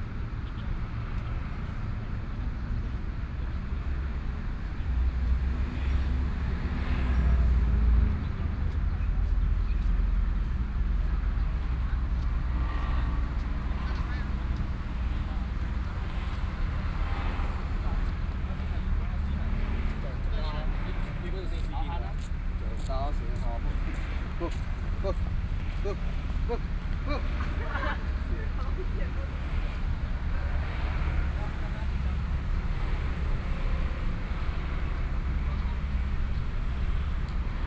陳中和墓園, Kaohsiung City - in the Park
in the Park, Traffic Sound, In the cemetery, Also monuments, now is also a park
15 May, 17:08